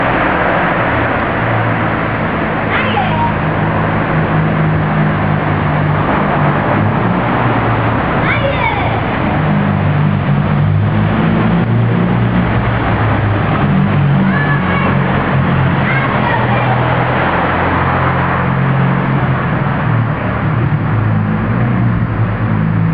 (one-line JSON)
{"date": "2009-09-06 16:23:00", "description": "Bolsover town centre on a Sunday", "latitude": "53.23", "longitude": "-1.29", "altitude": "169", "timezone": "Europe/Berlin"}